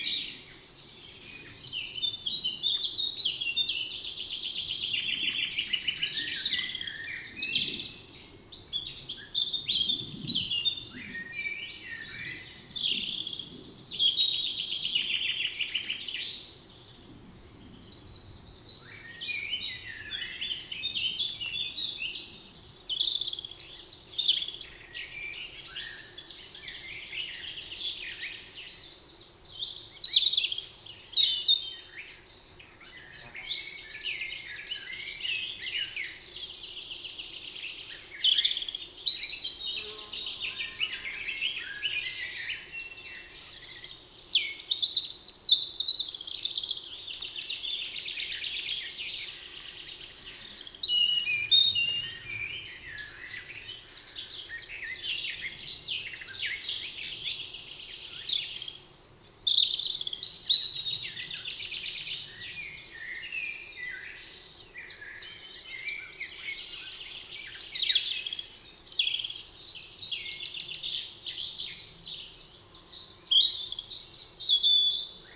Court-St.-Étienne, Belgique - The forest
Recording of the birds in the forest. About the birds, I listed, with french name and english name :
Rouge-gorge - Common robin
Merle noir - Common blackbird
Pouillot véloce - Common chiffchaff
Pigeon ramier - Common Wood Pigeon
Mésange bleue - Eurasian Blue Tit
Mésange charbonnière - Great Tit
Corneille noire - Carrion Crow
Faisan - Common Pheasant
Pic Epeiche - Great Spotted Woodpecker
Fauvette à tête noire - Eurasian Blackcap
And again very much painful planes.
Court-St.-Étienne, Belgium